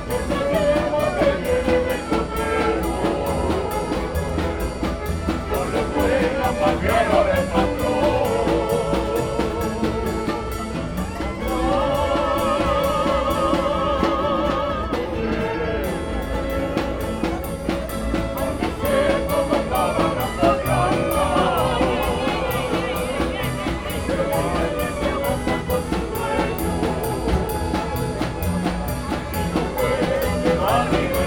Banda Municipal de León, Guanajuato playing some songs next to the kiosk in the city center.
People sitting nearby listening to the music while eating some ice cream or chips.
I made this recording on April 24, 2019, at 6:53 p.m.
I used a Tascam DR-05X with its built-in microphones and a Tascam WS-11 windshield.
Original Recording:
Type: Stereo
Banda Municipal de León, Guanajuato tocando algunas canciones junto al quiosco del centro de la ciudad.
Gente sentada en los alrededores escuchando la música mientras comían algún helado o papitas fritas.
Esta grabación la hice el 24 de abril 2019 a las 18:53 horas.